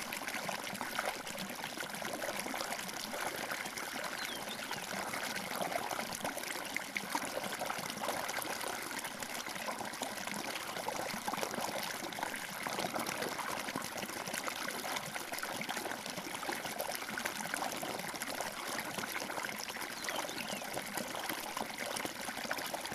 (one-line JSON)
{"title": "La Calera, Cundinamarca, Colombia - Arroyo", "date": "2013-05-26 06:04:00", "description": "sonido del agua.", "latitude": "4.72", "longitude": "-73.93", "altitude": "2989", "timezone": "America/Bogota"}